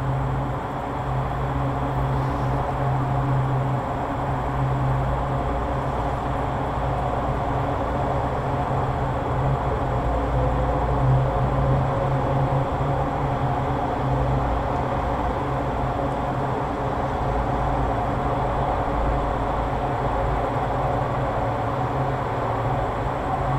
The University of Texas at Austin, Austin, TX, USA - UT Chilling Station No. 7
Recorded during the construction of UT's 7th Chilling Station for the upcoming Medical School. Equipment: Marantz PMD661 and a stereo pair of DPA 4060's.
29 June